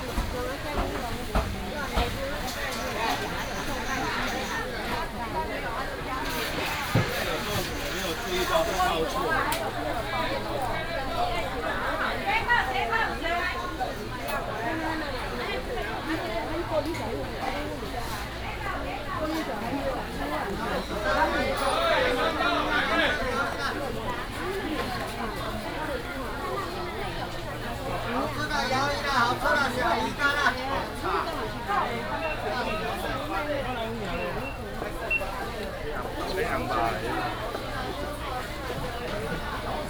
Ren’ai Rd., Zhudong Township, Hsinchu County - Traditional market
Traditional market, Morning in the area of the market, Binaural recordings, Sony PCM D100+ Soundman OKM II
Hsinchu County, Taiwan, 12 September 2017